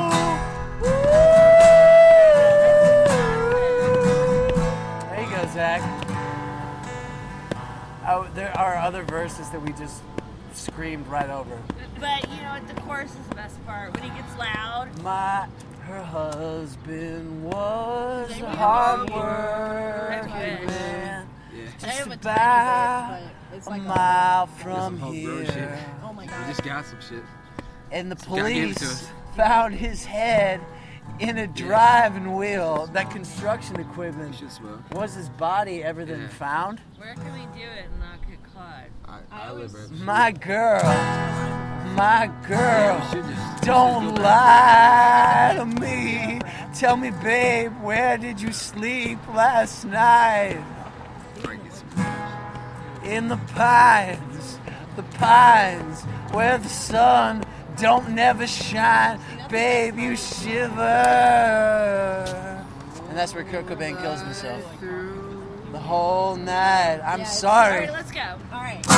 Angel Falls Coffee Shop, Highland Square, Akron OH - Buskers outside Angel Falls Coffee Shop
A busker and friends busk and talk outside of Highland Square's Angel Falls on sunny afternoon in Akron. WARNING, the second song gets a bit loud in the middle.The sound was recorded using a Zoom Q3HD Handy Video Recorder and Flip mini tripod. The tripod was set on the ground.